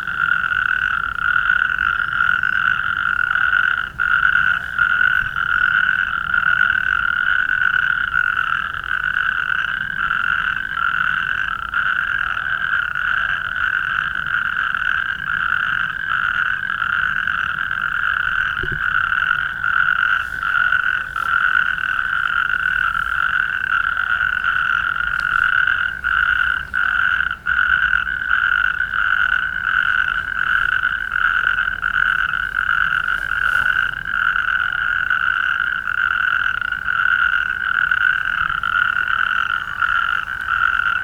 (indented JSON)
{
  "title": "Frogs near Babenhausen, Deutschland - Noisy Frogs in a spring evening in a big puddle",
  "date": "2013-05-04 21:19:00",
  "description": "Recorded with a Zoom H2n during a stroll trough the fields and woods near Babenhausen - a sunny Saturday evening after a cold and rainy day. Next to the town so much nature - so amazing, we love this place!",
  "latitude": "49.96",
  "longitude": "8.93",
  "altitude": "128",
  "timezone": "Europe/Berlin"
}